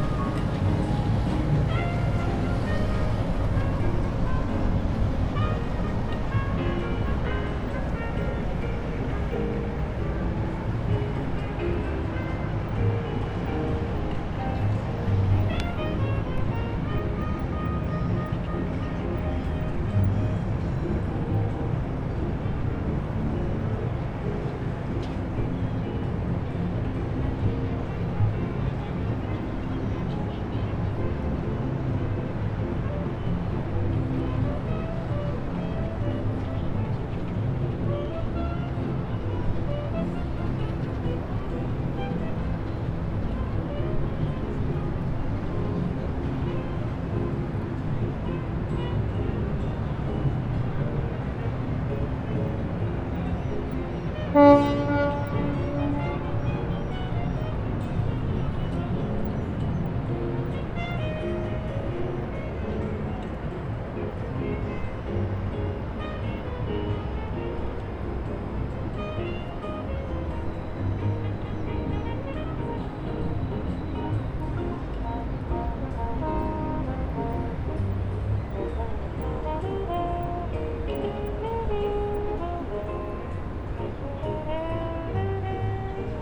There are lots of boats on Rasinovo waterfront. Once in the evening I walked around and one boat just sailed away. It was like a movie scene. The boat band started to play, it was already past the sunset and one of the last warm days. The boat was almost empty. Just a few bored men in suits, the wind in their hair. The moment just before the boat blare is magic.
18 September 2009